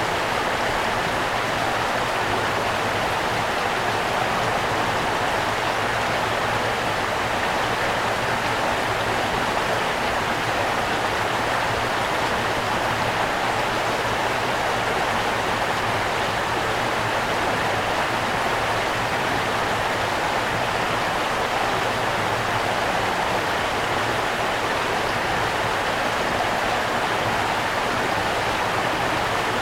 Barranco do Preto, Foia, Monchique, Portugal - Barranco do Preto's place.
This is one of the coldest places of Foia, a place called Barranco do Preto. Here, we can hear a small brook reverberating in the valley.
This is one of the first field recordings of my girlfriend.
She used the ZOOM H6 as a recorder and the MS mic of it. She also used the shotgun mic - The T.Bone EM9900.
My girlfriend used the shotgun for details and the ZOOM H6 mic for the ambient.
This sound is the composition of the two recorded tracks.
Hope you like it.
26 December 2014